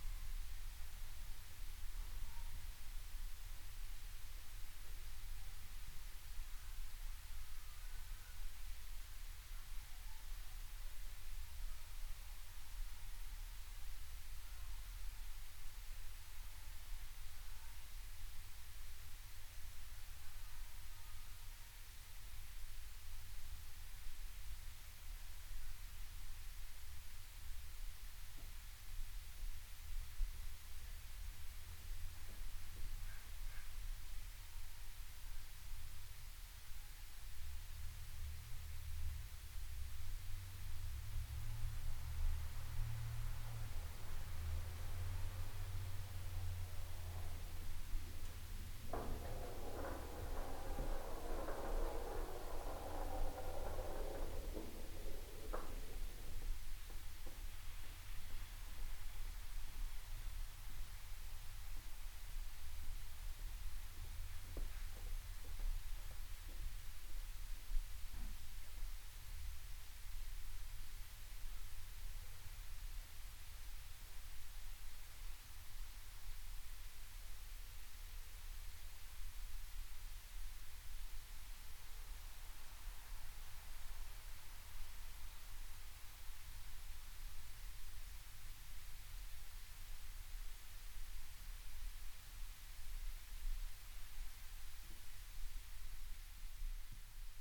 Ave. (Pioneer Building), Seattle, WA, USA - Between Stories (Underground Tour 5)
Amidst old elevator parts, within the lower level of the Pioneer Building. Footsteps, eventually skateboard, heard from ceiling/sidewalk above. "Bill Speidel's Underground Tour" with tour guide Patti A. Stereo mic (Audio-Technica, AT-822), recorded via Sony MD (MZ-NF810).
12 November 2014, 11:52